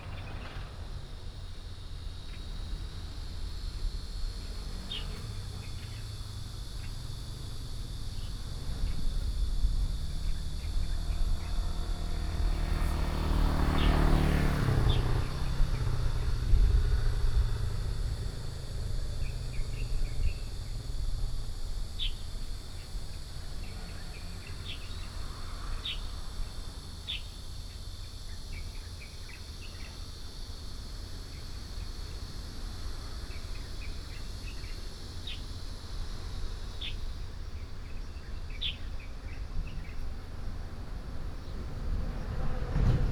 {"title": "Gengxin Rd., Toucheng Township - Small towns", "date": "2014-07-07 14:20:00", "description": "Small towns, Birdsong, Very hot weather, Traffic Sound", "latitude": "24.90", "longitude": "121.86", "altitude": "14", "timezone": "Asia/Taipei"}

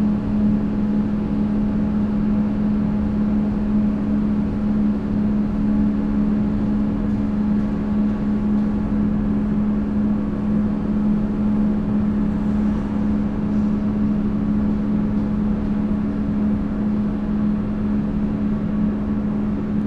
{"title": "Maribor, Einspielerjeva, flour mill - silo resonances", "date": "2012-05-29 11:55:00", "description": "the various drones created by the machinery of the flour mill interfere at certain spots, in manifold pattern, audible all over the place.\n(SD702, DPA4060)", "latitude": "46.56", "longitude": "15.66", "altitude": "271", "timezone": "Europe/Ljubljana"}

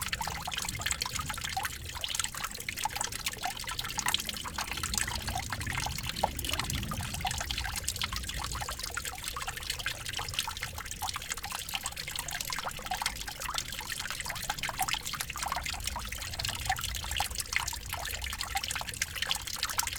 {"title": "neoscenes: spring melt-water", "date": "2019-03-30 12:47:00", "description": "Listening to springtime in the Rocky Mountains, as icy melt-water erodes the conglomerate sandstone of Hidden Mesa ... under the constant drone of air traffic.", "latitude": "39.40", "longitude": "-104.80", "altitude": "1965", "timezone": "America/Denver"}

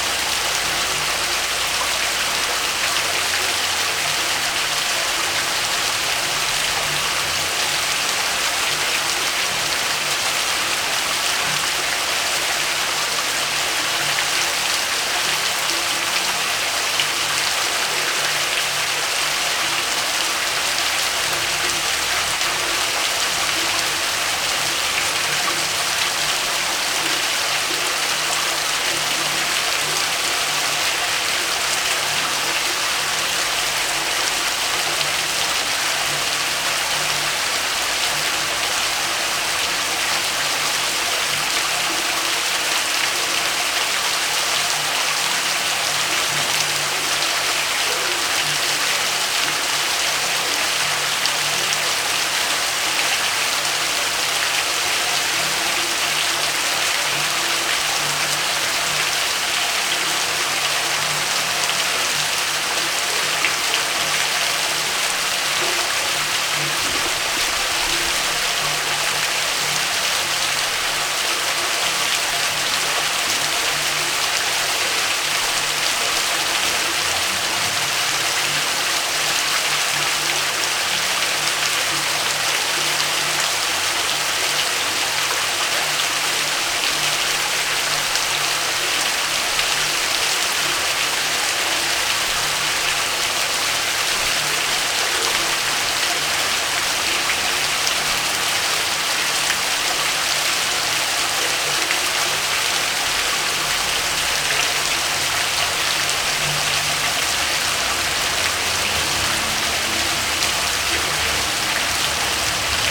{"title": "Fontaine place de Coëtquen - Rennes", "date": "2011-08-19 11:28:00", "description": "Fontaine circulaire à jet ascendant, place de Coëtquen à Rennes (35000) France.\nRéalisée par Claudio Parmiggiani en 1992.\nUne Fontaine.\nLimite des flammes devastatrices de lincendie de 1720, la place de Coëtquen encadre la fontaine doù surgit leau pour redonner la vie.\nUne base circulaire, un fût couronné dun anneau, la fontaine apparait tel un puit de granit bleu. Une tête de muse endormit, taillée en marbre blanc, repose à fleur deau en son centre.", "latitude": "48.11", "longitude": "-1.68", "altitude": "37", "timezone": "Europe/Paris"}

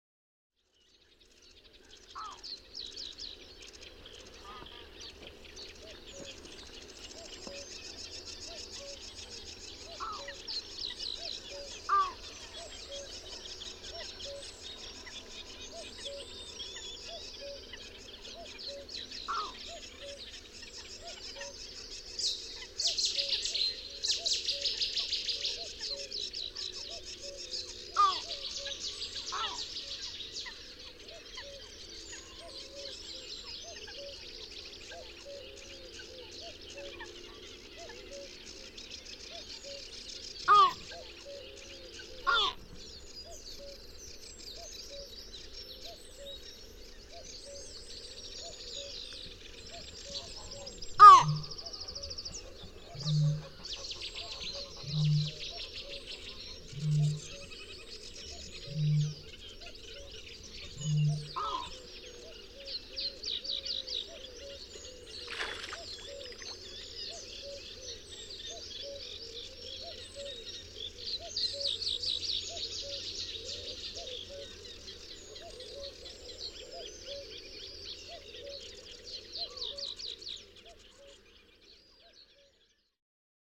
{"title": "Joist Fen, Lakenheath, Suffolk - Bitterns in flight", "date": "2017-06-10 06:31:00", "description": "10th June 2017\nJoist Fen, Lakenheath, Suffolk.\nEarly morning. Three bitterns fly over, calling.\nMono. Telinga Twin Science Pro 8 MkII in parabolic reflector to SD 702", "latitude": "52.45", "longitude": "0.52", "altitude": "1", "timezone": "Europe/London"}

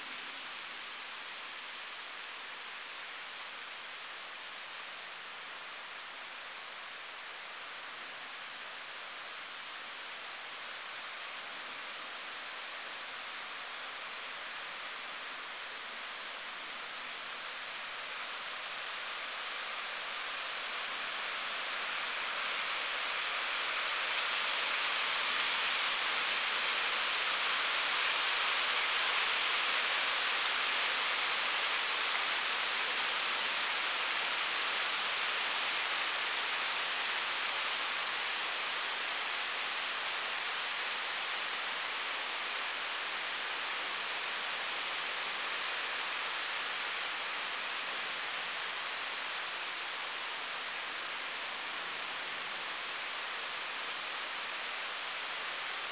{"title": "Jordan Rd, Buena Vista, VA, USA - Wind Over Jordan Road", "date": "2018-07-13 03:15:00", "description": "Jordan Road is a gated Forest Service Road in the George Washington National Forest. Recorded half a mile or so past the western gate, on the southern slope just below the road. Tascam DR-05; Manfrotto tabletop tripod; Rycote windscreen. Mics angled upward to catch the rustle of the wind in the canopy. Percussive sound is hickory nuts dropping in the wind.", "latitude": "37.76", "longitude": "-79.32", "altitude": "487", "timezone": "GMT+1"}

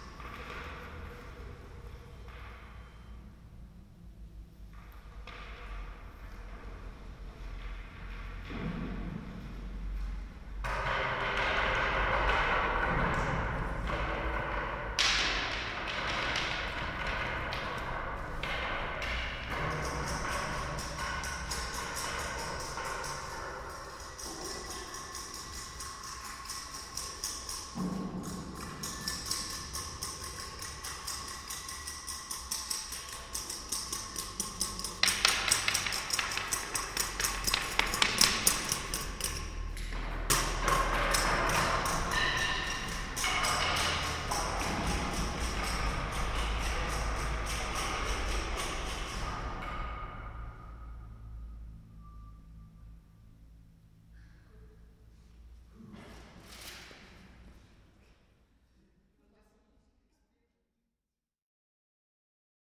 {"title": "Mayrau mine museum", "description": "acoustic piece for the dressing room of the former Mayrau mine", "latitude": "50.17", "longitude": "14.08", "altitude": "352", "timezone": "Europe/Berlin"}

